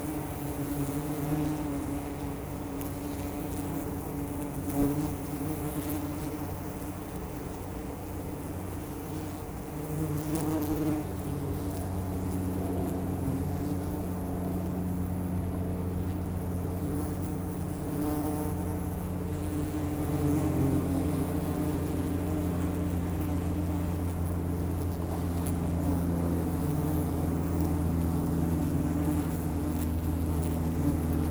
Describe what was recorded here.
Wild bees are digging holes in the ground of the forest.